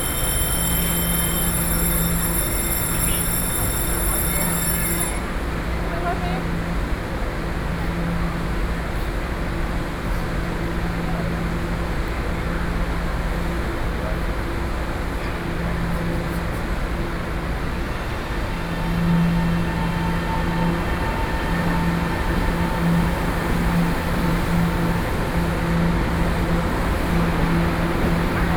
{
  "title": "Taipei city, Taiwan - Taipei Main Station",
  "date": "2012-11-13 11:25:00",
  "latitude": "25.05",
  "longitude": "121.52",
  "altitude": "29",
  "timezone": "Asia/Taipei"
}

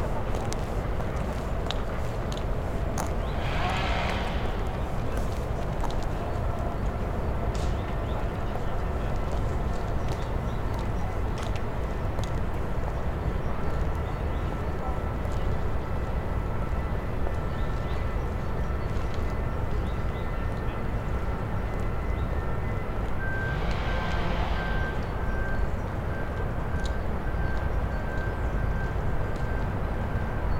{
  "title": "All. Charles Dénat, Toulouse, France - the Japanese garden in winter",
  "date": "2022-01-14 10:20:00",
  "description": "the Japanese garden in winter, footsteps, walkers\nthe city in the background and a chainsaw in the park\nCaptation : ZOOM H6",
  "latitude": "43.61",
  "longitude": "1.43",
  "altitude": "142",
  "timezone": "Europe/Paris"
}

